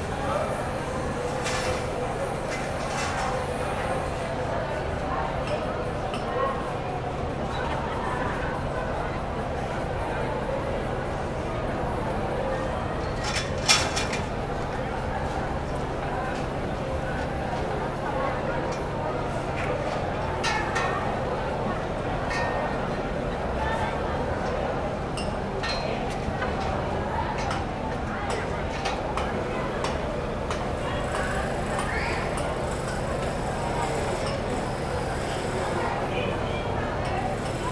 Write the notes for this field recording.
Burburinho da feira, pessoas trabalhando, algo que parece com um pato. Som gravado na janela do segundo andar do mercado municipal. People working at the free market, something that seems like a duck. Recorded on the second floor of the municipal market.